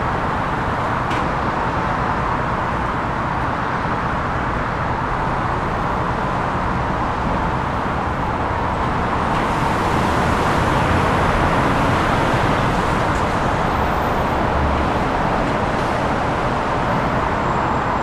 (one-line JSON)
{"title": "Stockholm, Sverige - Essingeleden", "date": "2020-08-19 15:00:00", "description": "Heavy traffic. People biking and walking. Close to a T-intersection and under an elevated highway, part of European route E4 and E20. Zoom H5", "latitude": "59.30", "longitude": "18.02", "altitude": "39", "timezone": "Europe/Stockholm"}